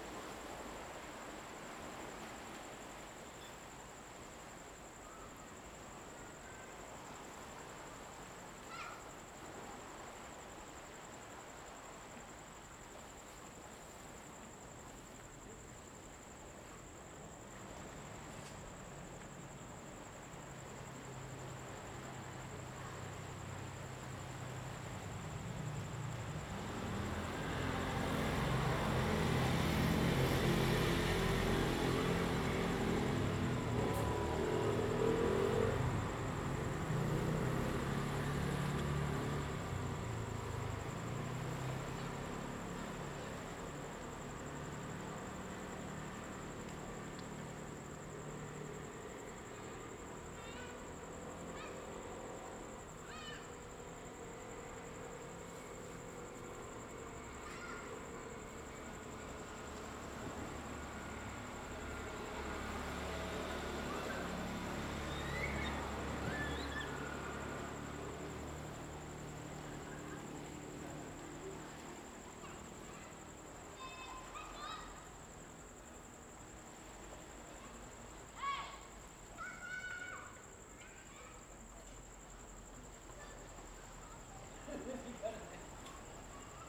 大福村, Hsiao Liouciou Island - Small fishing village
Small fishing village, Sound of the waves
Zoom H2n MS+XY